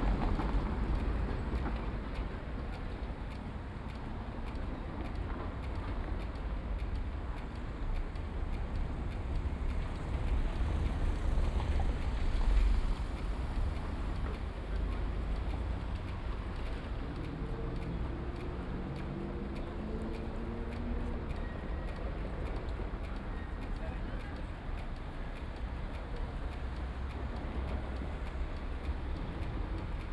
Prague, Czech Republic - Narodni Tickers
On a busy junction, with two nicely out of sync ticker signals to help the visually impaired know when to cross, one on the left one on the right. cars and trams passing. Soundman binaural mics / Tascam DR40.